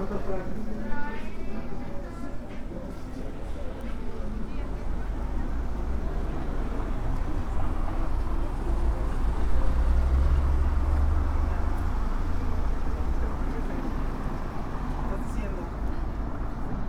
Walking down Madero street.
From Zaragoza street to Donato Guerra street.
I made this recording on march 29th, 2022, at 6:00 p.m.
I used a Tascam DR-05X with its built-in microphones and a Tascam WS-11 windshield.
Original Recording:
Type: Stereo
Esta grabación la hice el 29 de marzo de 2022 a las 18:00 horas.
Guanajuato, México, 29 March 2022